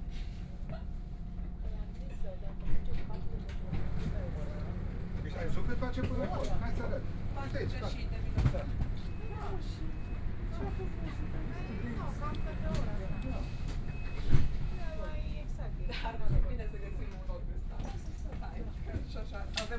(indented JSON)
{
  "title": "München-Flughafen, Germany - S-Bahn Munich",
  "date": "2014-05-06 20:23:00",
  "description": "S Bahn Munchen, In the station platform, Into the compartment",
  "latitude": "48.35",
  "longitude": "11.79",
  "altitude": "451",
  "timezone": "Europe/Berlin"
}